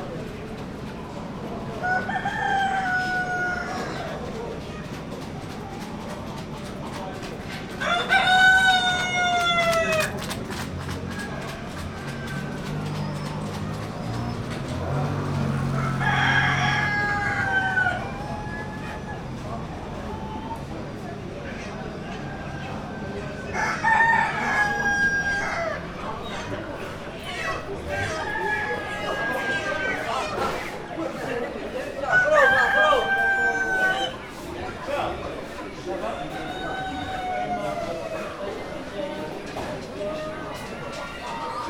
Morocco, Marrakesh, Marokko - chicken market
At the northern edge of the Djemaa el Fna in the maze of boutiques with leather sandals, cloths, crockery and argan oil there is in a small backyard, quite surprisingly, a chicken market that does not fit into the other tourist traffic. Dust from chicken feathers takes one's breath away.